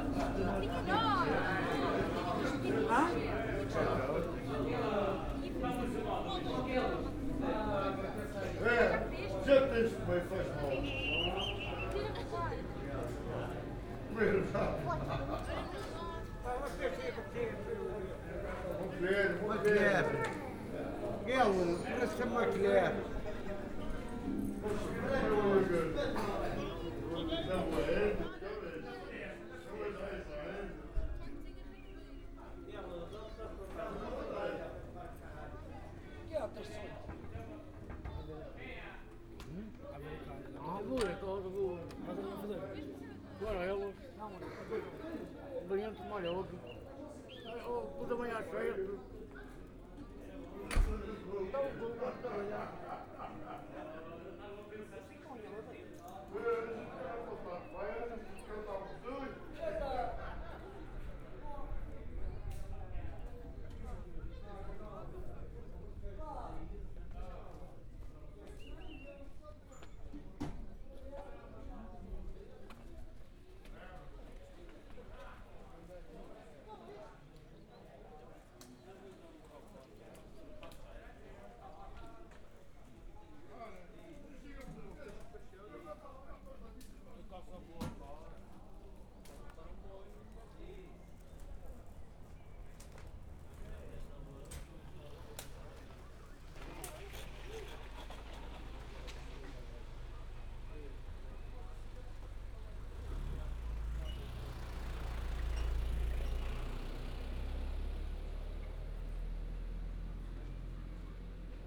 (binaural) standing near a bar where Monte toboggan sled drivers hang out while waiting for customers or taking a break. a bit later into the recording moving towards the place where the ride starts. a few tourist decide to take a ride.